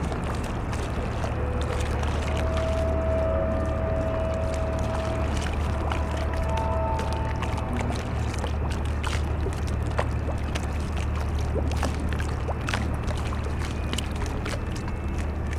berlin, plänterwald: spree - the city, the country & me: spree river bank
lapping waves of the spree river, squeaking drone of the ferris wheel of the abandonned fun fair in the spree park, distant sounds from the power station klingenberg, towboat enters the port of klingenberg power station
the city, the country & me: february 8, 2014